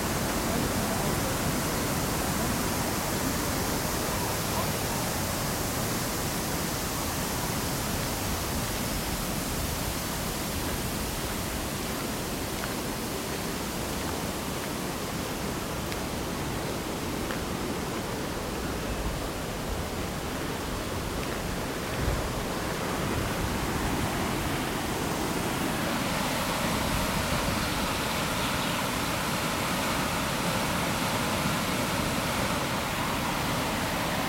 lippstadt, friedrichschleuse
sluice at lippe-seitenkanal (a short canal in lippstadt).
recorded june 23rd, 2008.
project: "hasenbrot - a private sound diary"